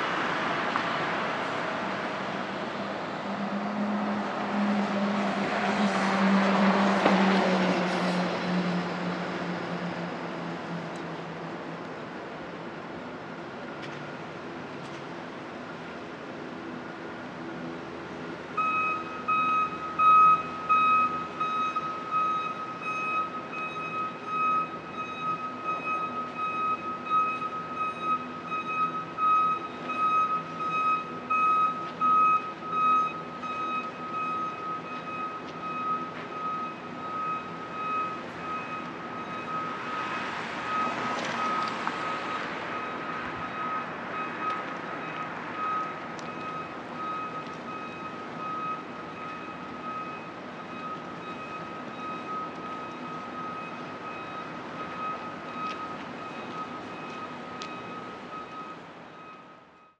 Peel / Sainte-Catherine, Montréal, QC, Canada - Peel Street
Recording at the corner of Peel St and Saint-Catherine St. At one of the larger crossroads, we hear some morning commuters and workers travelling through. The sounds of winter tires rolling on the cold concrete streets, the sounds of a truck reversing into an alley, and with the lack of pedestrians there is little organic life present at this junction.